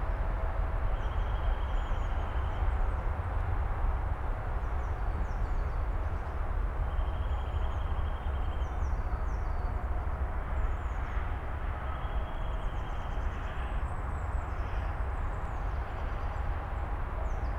Waldweide, Karow, Berlin - suburb nature ambience w/ Autobahn
Berlin Karow, suburb, nature reserve Karower Teiche, forest ambience dominated by nearby Autobahn A10 drone
(Sony PCM D50, DPA4060)